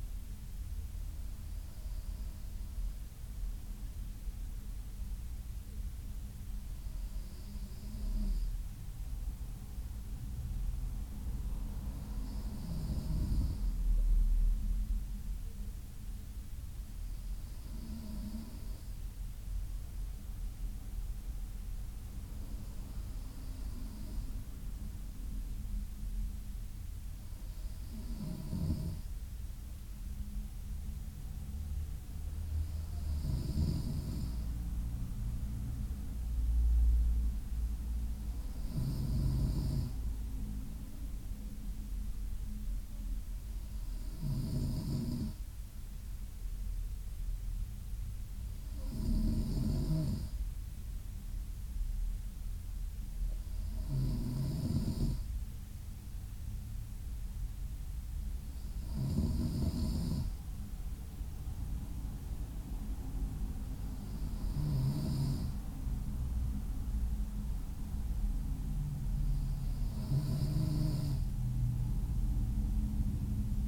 Calea Victoriei, București 010082 romania - yan sleeping
hotel.
5 minutes after sleep.
2 x dpa 6060 mics.